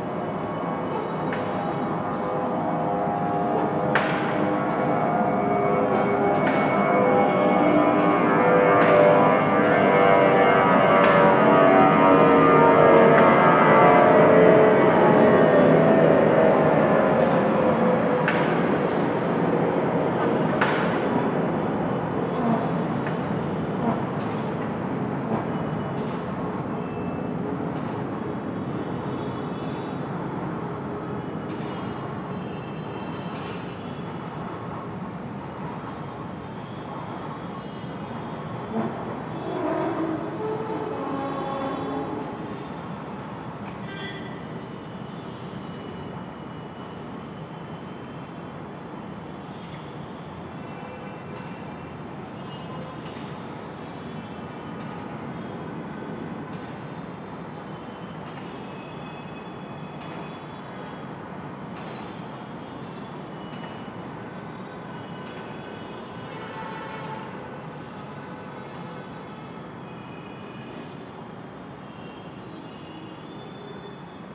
West End Colony, Vasant Enclave, Vasant Vihar, New Delhi, Delhi, India - 01 Rising concrete
Distant motorway construction.
Zoom H2n + Soundman OKM
10 January 2016